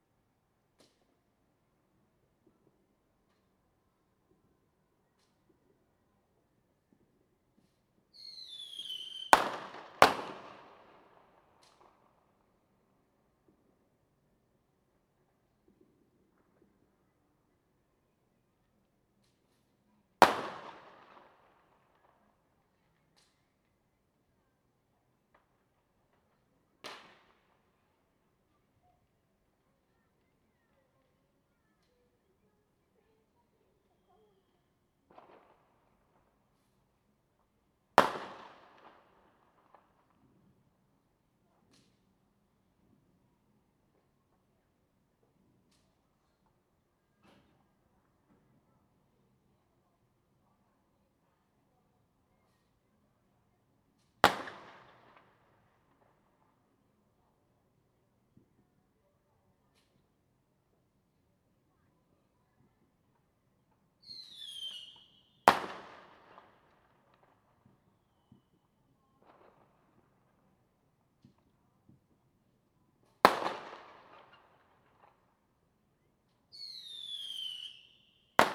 Fanshucuo, Shuilin Township - Firecrackers
Firecrackers, Small village, Traditional New Year
Zoom H2n MS +XY
Shuilin Township, 雲151鄉道, 9 February 2016